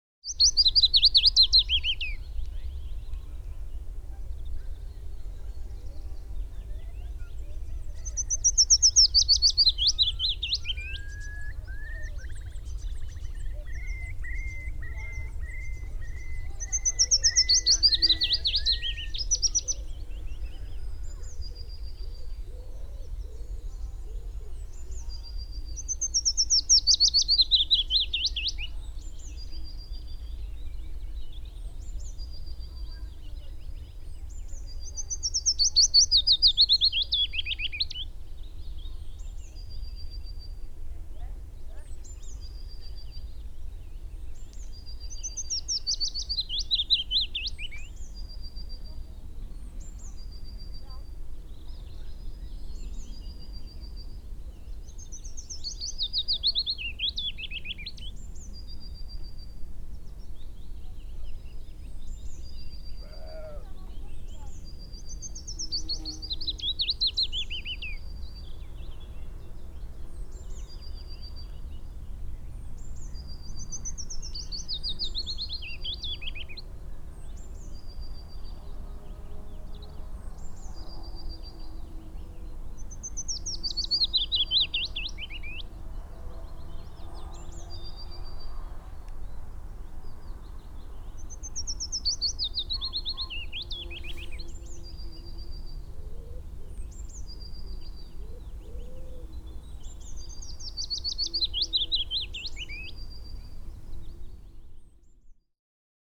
Walking Holme Digley
Birds and Bees. I don know what the main bird is but there is a Curlew once a couple of bees and a sheep.
Kirklees, UK